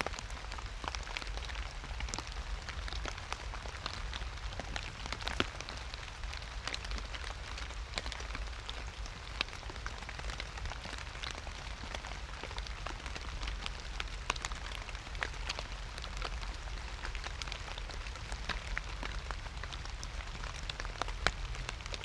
Rain, trains, clangy bells, autumn robin, ravens, stream from the Schöneberger Südgelände nature reserve, Berlin, Germany - Rain on crackly autumn leaves and passing trains
Schöneberger Südgelände is located on the former Tempelhof railway yard. Since it's closure nature has reclaimed the area. Rusty tracks still run through it and preserved trains and rail infrastructure are part of the now wooded landscape. Because of its industrial past it has a totally unique ecology unlike anywhere else in Berlin (300 moss species are found there). It lies between today's SBahn and the current main line south from the city, so trains at full power, or slowly passing by, are dominant events in the soundscape. These recordings are taken from a 24hr stream set up in late November. This one is at night during light rain. The microphones are hidden under fallen leaves onto which the rain drops. it is windless.
Deutschland, 27 November 2021